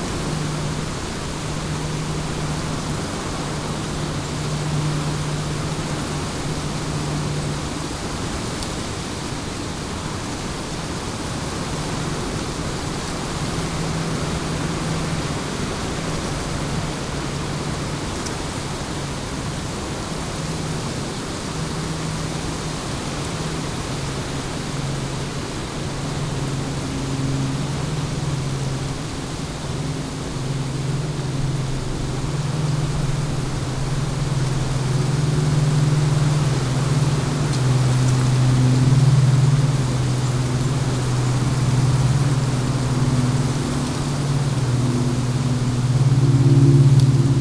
On the completely bare fields of the Burgundy area, wind rushes in a copse. Trees fold into the wind.
France